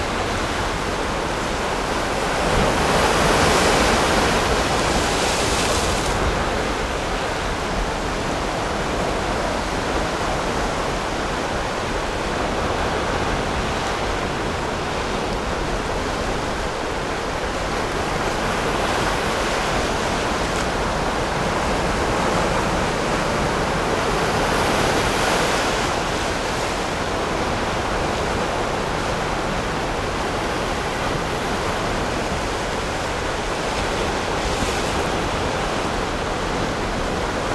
Gushan, Kaohsiung - The sound of the waves
鼓山區 (Gushan), 高雄市 (Kaohsiung City), 中華民國